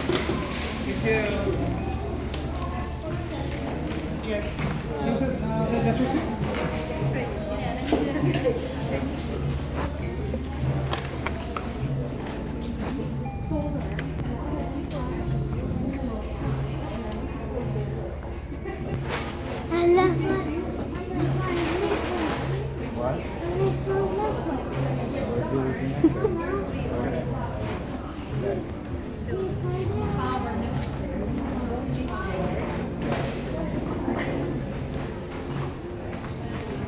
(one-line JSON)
{"title": "Virginia St, Berkeley, CA, USA - Crate and Barrel", "date": "2015-08-15 05:23:00", "latitude": "37.87", "longitude": "-122.30", "altitude": "4", "timezone": "America/Los_Angeles"}